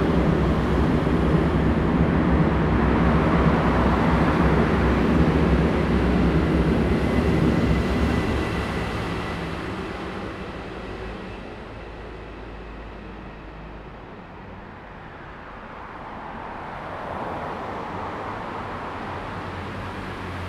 Xida Rd., East Dist., Hsinchu City - Underground lane
Traffic sound, Train traveling through, Underground lane
Zoom H2n MS+XY
Hsinchu City, East District, 新竹市西大路人行地下道